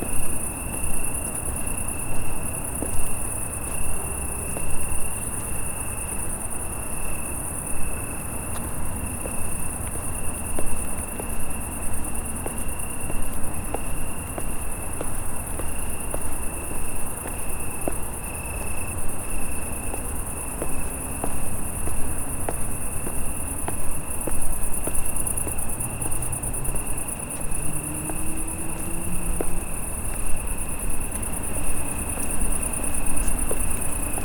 Crickets in The Summer Night
At Albertov, just behind the Church of St. Apollinaire is long staircase downwards the Studničkova street, where you can find areal of the hospital and other Charles University buildings. The Botanic garden is very close from there. This place is very quiet and beautiful during summer evenings and nights. Along the staircase are small shrubs and benches. You can meet there just loud crickets and very silent lovers during the August. Memories of the summer night and the chorus of crickets.